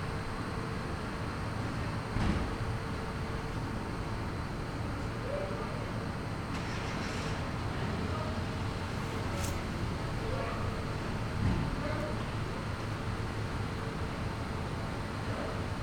Colombarium ventilation pelouse
Fête des Morts
Cimetière du Père Lachaise - Paris
Ventilation, sous la pelouse (interdite)